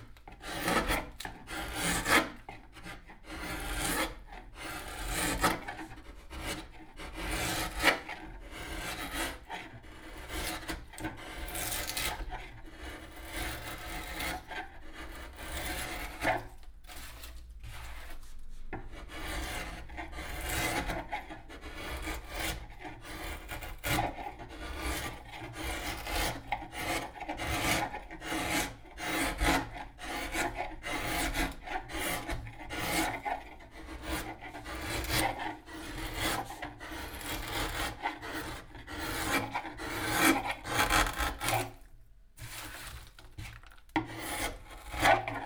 Umeå. Violin makers workshop.
Planing the wood (spruce). Binaural mics.